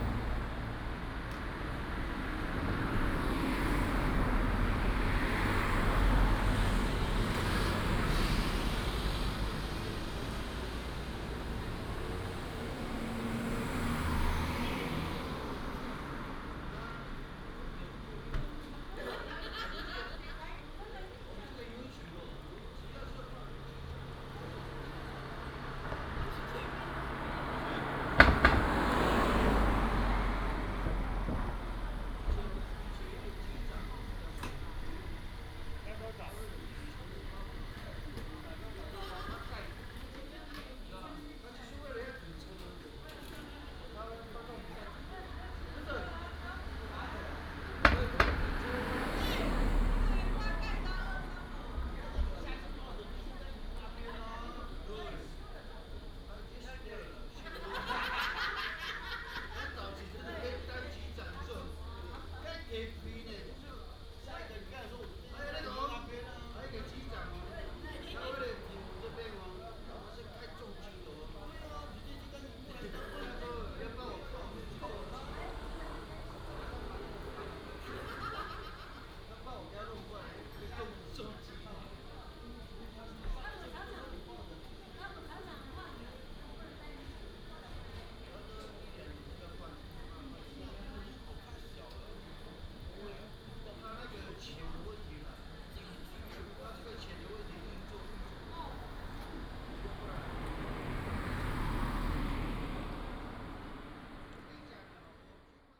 In the tribal main street, Many people go back to the tribe for consecutive holidays, Paiwan people
Taitung County, Taiwan, 5 April, 19:27